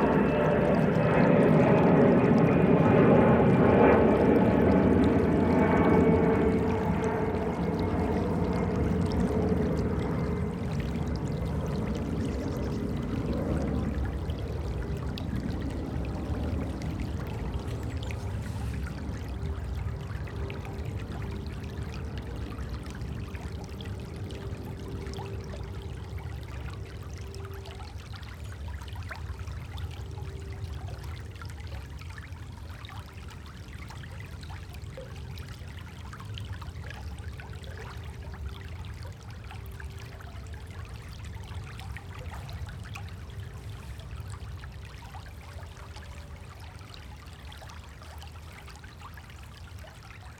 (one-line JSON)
{"title": "Soldiner Kiez, Wedding, Berlin, Deutschland - At the small river Panke, Berlin - Water sounds and aircraft passing by", "date": "2012-11-10 12:40:00", "description": "Plätschernde Panke, überlagert vom Geräusch eines Flugzeugs.", "latitude": "52.56", "longitude": "13.38", "altitude": "46", "timezone": "Europe/Berlin"}